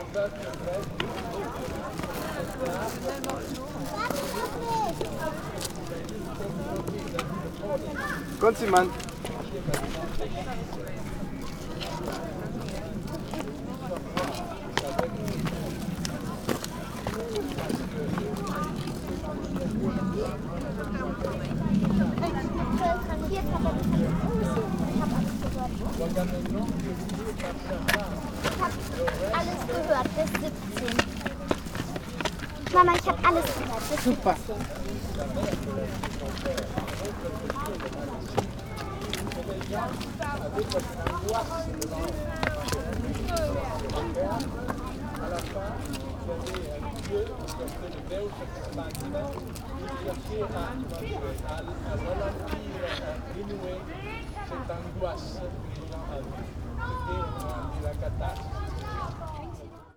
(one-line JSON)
{"title": "taormina, teatro greco", "date": "2009-10-25 16:35:00", "description": "ancient amphitheatre on a sunday afternoon", "latitude": "37.85", "longitude": "15.29", "altitude": "232", "timezone": "Europe/Berlin"}